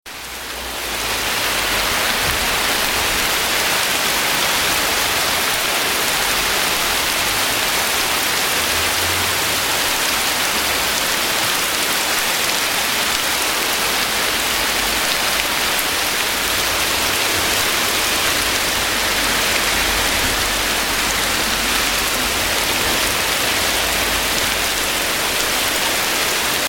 via Oidio, Parabiago, Temporale estivo
Un temporale estivo rinfresca un caldo pomeriggio di agosto
Parabiago Milan, Italy, 15 August 2007, 11:30